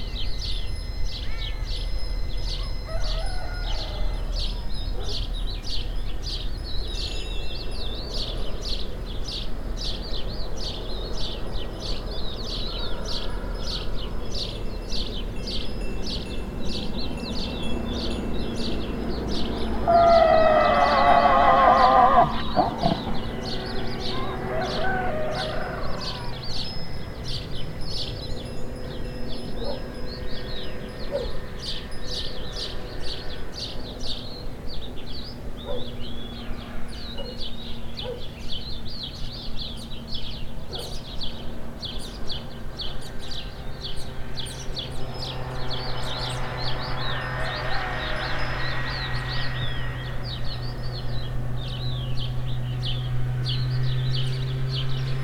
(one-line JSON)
{"title": "Santorini near Oia, 7 a.m., time to wake up", "latitude": "36.48", "longitude": "25.38", "altitude": "22", "timezone": "Europe/Berlin"}